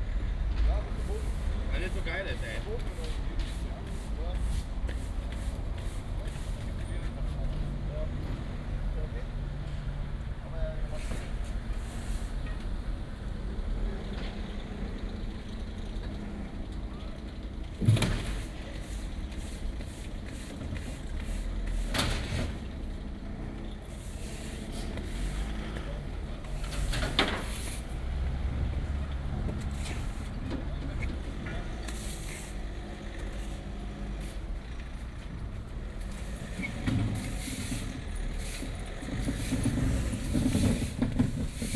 Maybachufer, weekly market - cleanup after market (2)
09.09.2008 20:00
cleanup, worker complains about shitty job.
Berlin, Deutschland, September 2008